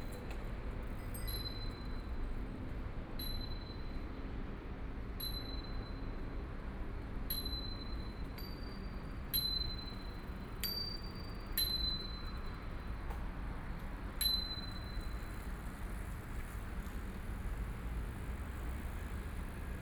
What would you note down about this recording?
Walking trail behind the MRT station, Footsteps, Traffic Sound, Please turn up the volume a little. Binaural recordings, Sony PCM D100+ Soundman OKM II